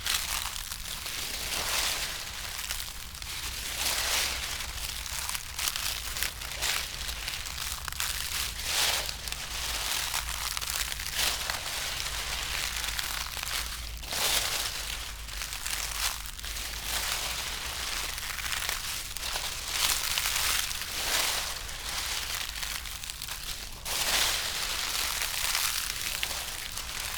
river Drava paths, Melje - autumn carpet
dry poplar leaves, steps